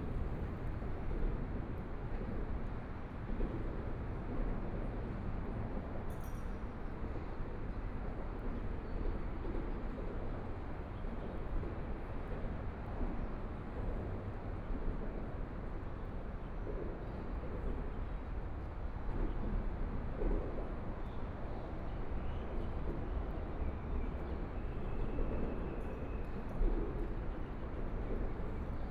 中山區新庄里, Taipei City - Sound from highway traffic
Standing beneath the freeway lanes, Sound from highway traffic, Traffic Sound, Sound from highway, Aircraft flying through, Birds singing, Binaural recordings, Zoom H4n+ Soundman OKM II